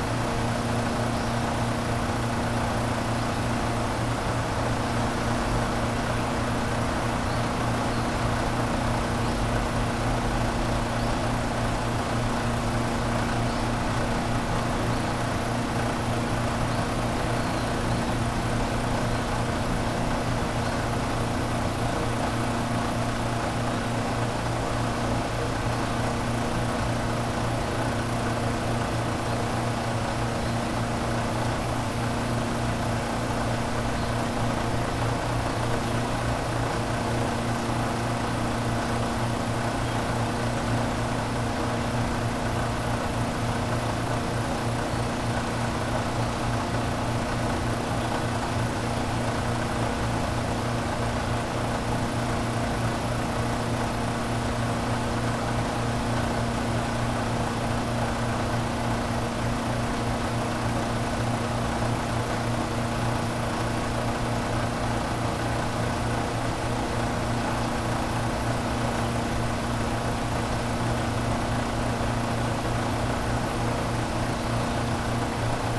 Osaka, Shitennoji Temple, Gokuraku-jodo Garden - water pump
a water pump chugging away in the peaceful Gokuraku-jodo Garden. Birds trying to break through with their chirps.
31 March, ~12:00